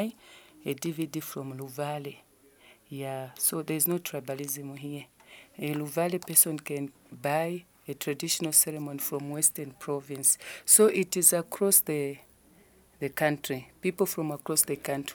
These recordings picture a visit to the audio archives of the Zambia National Broadcasting Corporation ZNBC. Mrs. Namunkolo Lungu from the audio and visual sales office introduces the ZNBC project of documenting the annual traditional ceremonies in all the provinces of the country, which has been running over 15 years. She talks about her work between the archive, the broadcasters, outreach and sales, describes some of the ceremonies, and adds from her personal cultural practices and experiences.
The entire playlist of recordings from ZNBC audio archives can be found at:
Lusaka, Zambia, 19 July 2012, 15:29